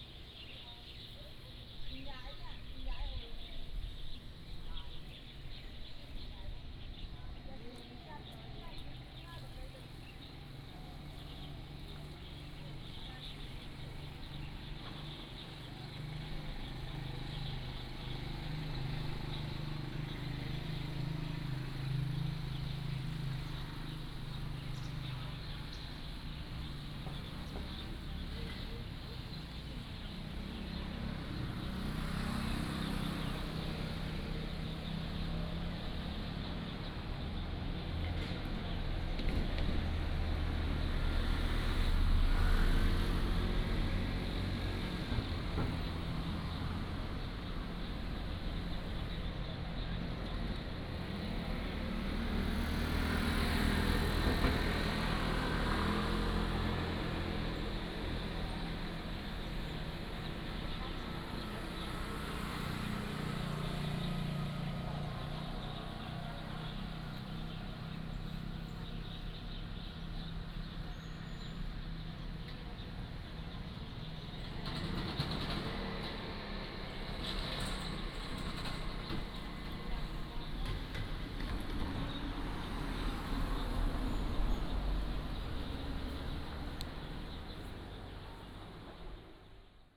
蔬菜公園, Nangan Township - Morning in the park
Morning in the park, Birds singing, Traffic Sound, Vegetables are grown throughout the park
福建省 (Fujian), Mainland - Taiwan Border, 15 October